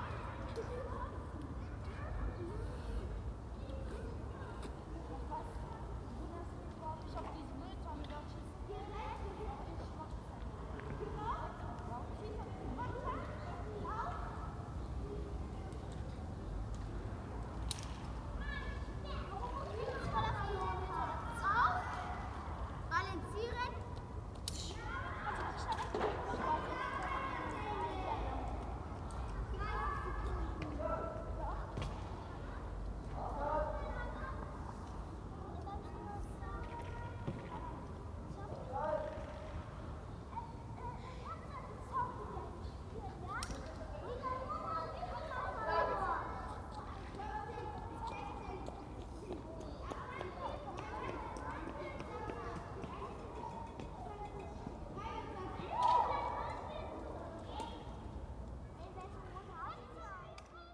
{
  "title": "Gesundbrunnen, Berlin, Germany - Reverberant appartments - low plane with kids and toy gun clicks",
  "date": "2011-10-23 15:02:00",
  "description": "The layout of these appartments creates a unique soundscape all of its own. Every sound gains an extra presence as it reverberates around the space.",
  "latitude": "52.56",
  "longitude": "13.39",
  "altitude": "44",
  "timezone": "Europe/Berlin"
}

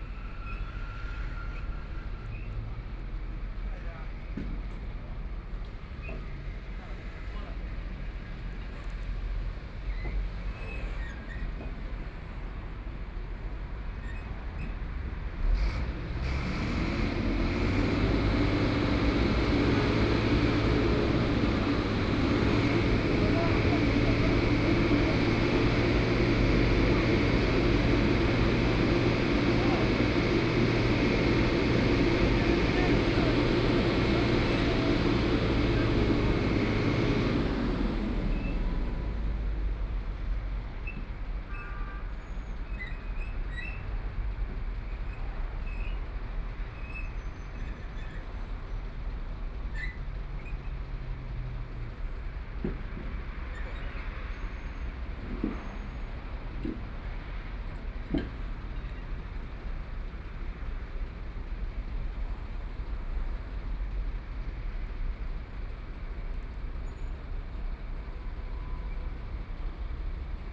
{
  "title": "South Henan Road, Shanghai - Large truck",
  "date": "2013-12-03 13:58:00",
  "description": "Large truck tires are changed, Traffic Sound, Binaural recording, Zoom H6+ Soundman OKM II",
  "latitude": "31.23",
  "longitude": "121.48",
  "altitude": "10",
  "timezone": "Asia/Shanghai"
}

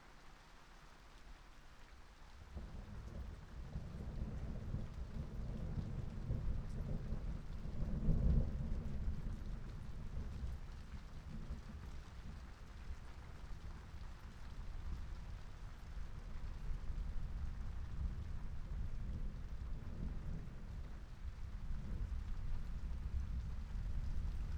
{
  "title": "Luttons, UK - thunderstorm ... moving away ...",
  "date": "2022-09-07 21:10:00",
  "description": "thunderstorm ... moving away ... xlr sass to zoom h5 ... background noise ...",
  "latitude": "54.12",
  "longitude": "-0.54",
  "altitude": "76",
  "timezone": "Europe/London"
}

